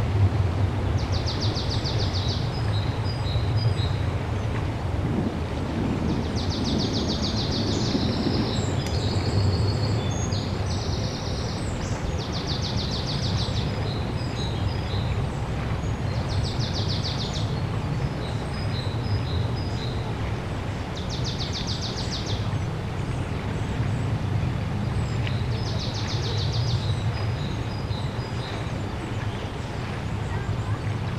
erkrath, neandertal, starfighter überflug
morgens im frühjahr 2007, starfighter übungsflug über dem tal, viel wind, im hintergrund arbeitsgeräusche aus wald und ferne kindergruppe
soundmap nrw:
social ambiences/ listen to the people - in & outdoor nearfield recordings
neanderthal